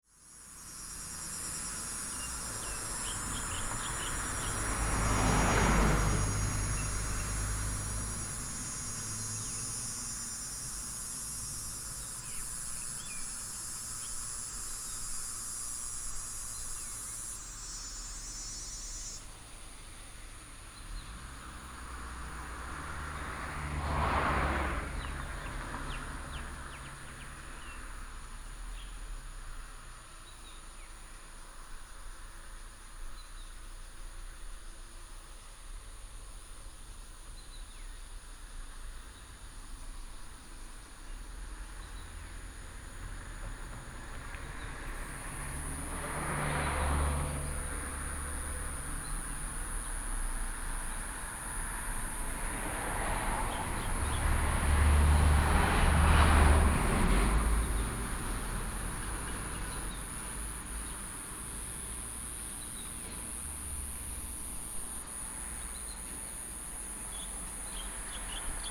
文德路一段, Xinpu Township - birds call and Traffic sound
birds call, Traffic sound, Insects, Cicadas